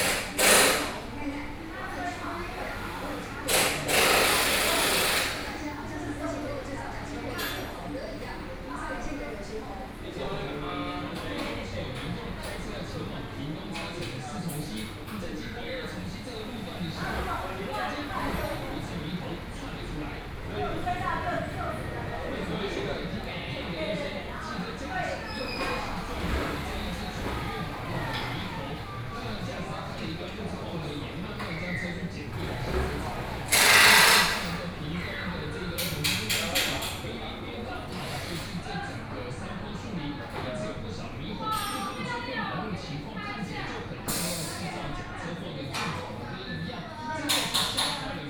Zhongshan Rd., 羅東鎮中山里 - Motorcycle repair shop
Motorcycle repair shop